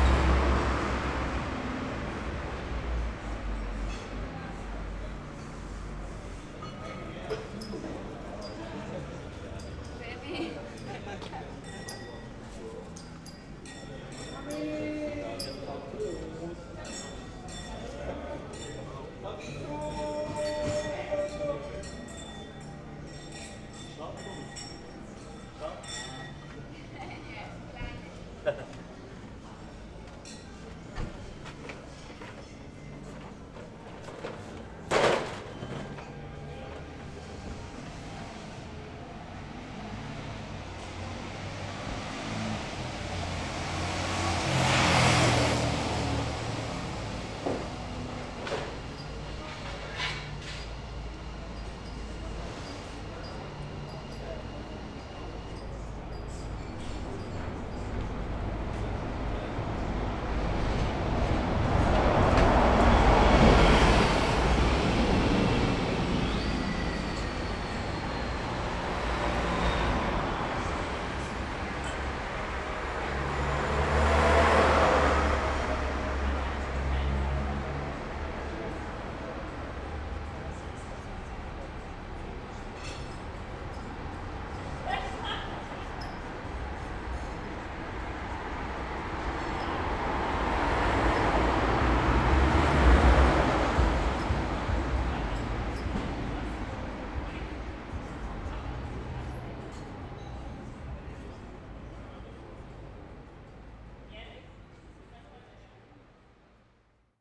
berlin: wildenbruchstraße - the city, the country & me: in front of spanish restaurant
in front of spanish restaurant "maria mulata"
World Listening Day, WLD
the city, the country & me: july 18, 2010